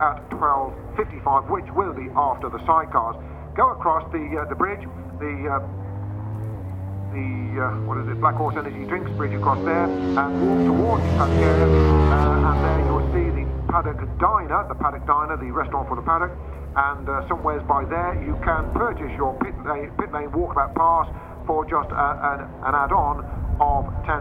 {
  "title": "Silverstone Circuit, Towcester, UK - world superbikes 2002 ... qualifying ...",
  "date": "2002-06-25 11:30:00",
  "description": "world superbikes 2002 ... qualifying ... one point stereo to sony minidisk ... commentary ... time optional ...",
  "latitude": "52.08",
  "longitude": "-1.02",
  "altitude": "154",
  "timezone": "Europe/London"
}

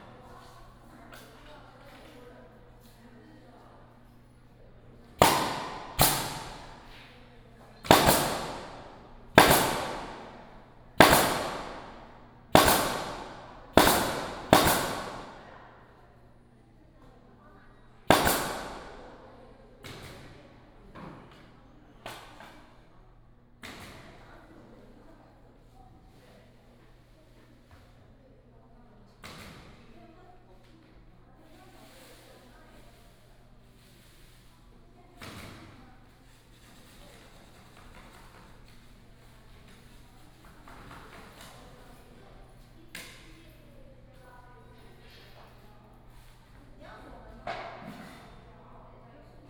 {
  "title": "New Taipei City Art Center, Taiwan - Exhibition site construction",
  "date": "2015-09-17 18:47:00",
  "description": "Exhibition site construction",
  "latitude": "25.03",
  "longitude": "121.47",
  "altitude": "12",
  "timezone": "Asia/Taipei"
}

